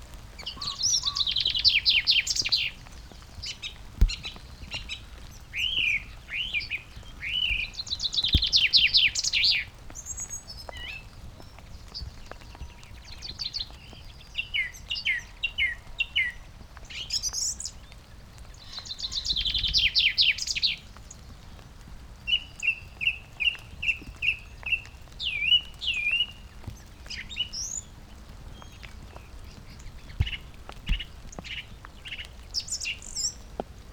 {"title": "Green Ln, Malton, UK - song thrush in the rain ...", "date": "2020-03-30 07:10:00", "description": "song thrush in the rain ... bird singing ... pre-amped mics in a SASS to LS 14 ... bird calls ... song ... from ... chaffinch ... red-legged partridge ... great tit ... pheasant ... crow ... skylark ... linnet ...", "latitude": "54.13", "longitude": "-0.55", "altitude": "83", "timezone": "Europe/London"}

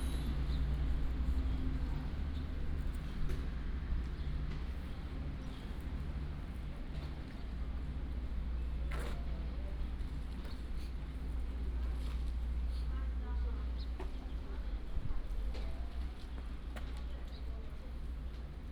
{"title": "Ershui Station, Changhua County - At the station platform", "date": "2018-02-15 09:29:00", "description": "At the station platform, The train passed, The train arrived at the station, lunar New Year\nBinaural recordings, Sony PCM D100+ Soundman OKM II", "latitude": "23.81", "longitude": "120.62", "altitude": "85", "timezone": "Asia/Taipei"}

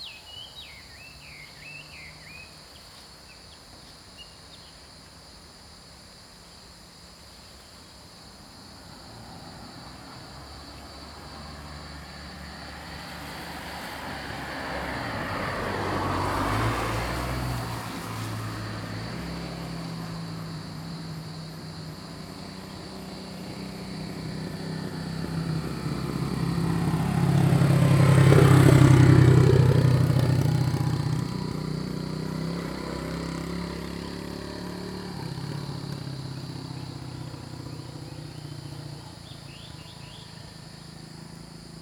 {"title": "Zhonggua Rd., 桃米里, Puli Township - Cicada sounds and Bird calls", "date": "2015-08-26 08:15:00", "description": "Cicada sounds, Bird calls, Crowing sounds, Frog chirping\nZoom H2n MS+XY", "latitude": "23.94", "longitude": "120.92", "altitude": "503", "timezone": "Asia/Taipei"}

